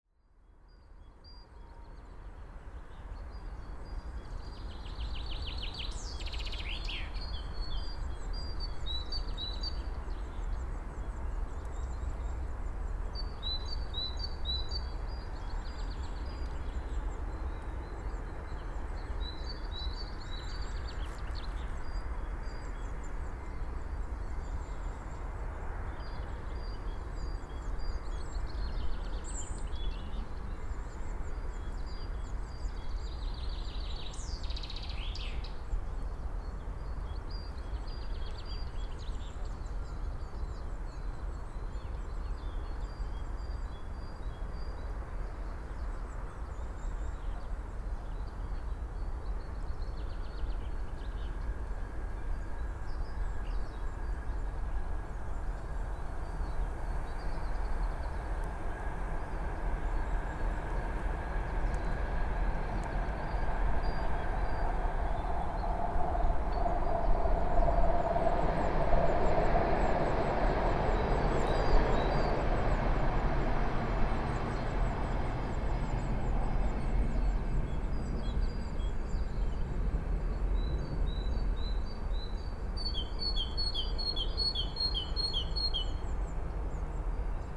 Fisksätra Holme - Le train train des oiseaux
Les oiseaux de Fiskisland ne sont pas perturbé par le train.